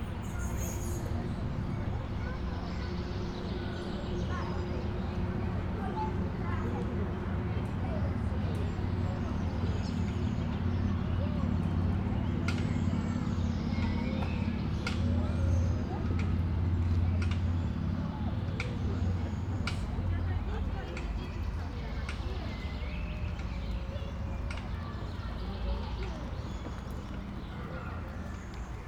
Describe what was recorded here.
afternoon ambience in Park Górnik, kids playing, distant rush hour traffic, (Sony PCM D50)